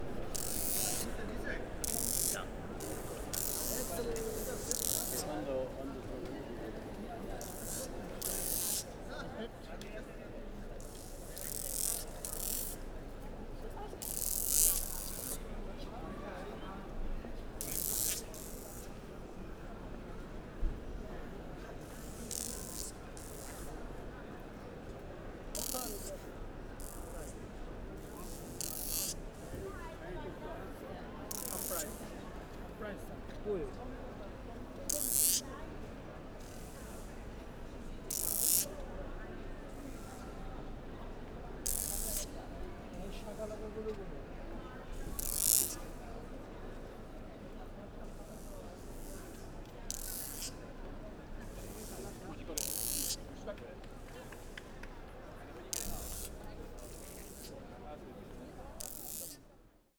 Magnet stone sellers. Piazza della Rotonda/Via del Seminario. Rom - Magnet stone sellers. Rom
Magnet stone sellers while they throw magnet stones in the air.
Rome, Italy, 6 May 2012